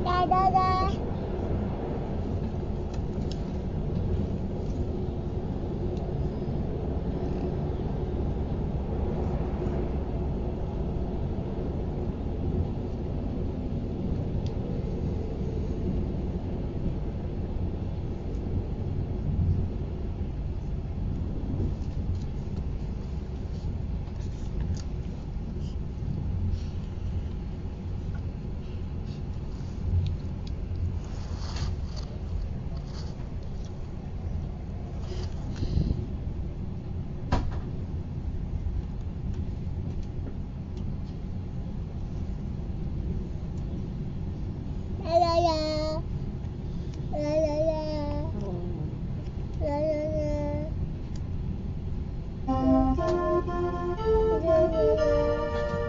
Mutter mit Kind, das eine unbekannte Sprache spricht.